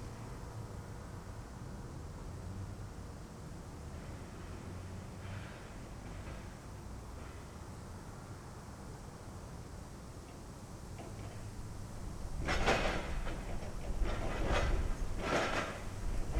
berlin wall of sound - am nordgraben. submitted byj.dickens & f.bogdanowitz 31/08/09